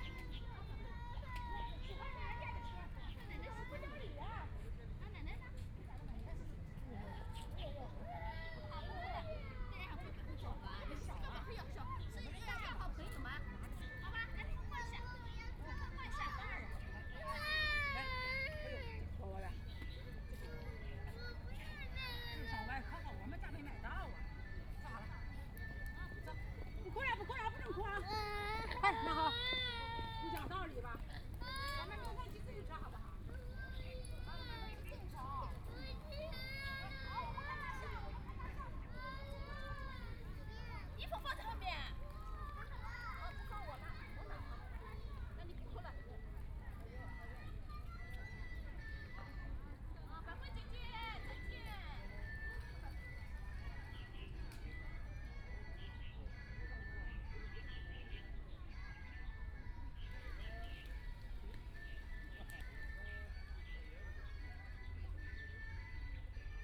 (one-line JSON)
{"title": "Yangpu Park, Shanghai - In the Square", "date": "2013-11-26 11:47:00", "description": "Woman and child on the square, There erhu sound nearby, Binaural recording, Zoom H6+ Soundman OKM II", "latitude": "31.28", "longitude": "121.53", "altitude": "5", "timezone": "Asia/Shanghai"}